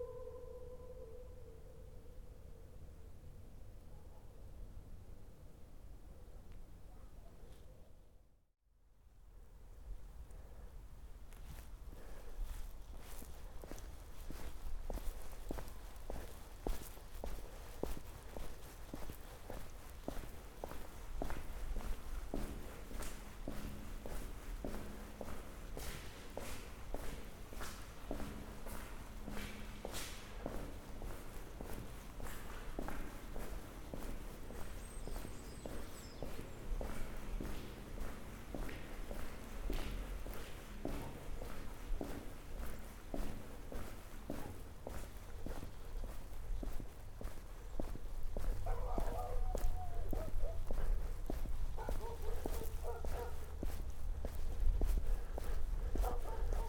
Lipce Reymontowskie, Polska - Tunnel under railway track lines ( binaural records)
Sounds from tunnel under railway track lines. The first part consists of the singing of two persons standing at two ends of the tunnel, the second part is a walk from beginning to end of tunnel